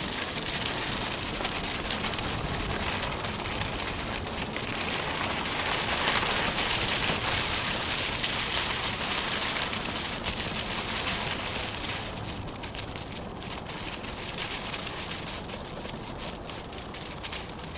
Court-St.-Étienne, Belgique - By bike in the dead leaves
Driving by bike in the dead leaves. This recording was very complicate to do, because it was sliding and I had to be careful with cars, wind and also, not to fall !